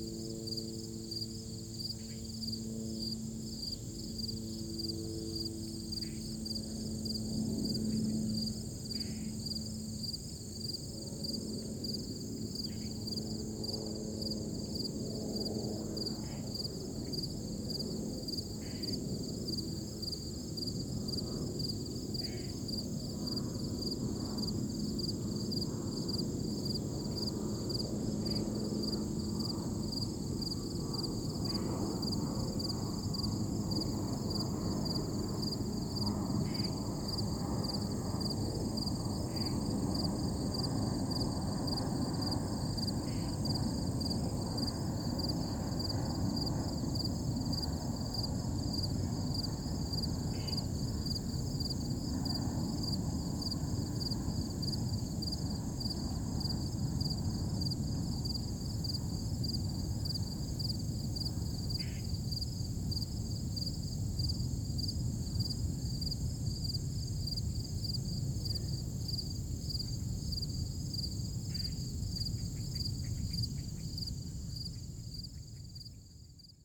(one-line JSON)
{"title": "Queeny Park, Town and Country, Missouri, USA - Emergency Locator 25", "date": "2022-08-19 19:28:00", "description": "Recording from emergency locator 25", "latitude": "38.62", "longitude": "-90.49", "altitude": "195", "timezone": "America/Chicago"}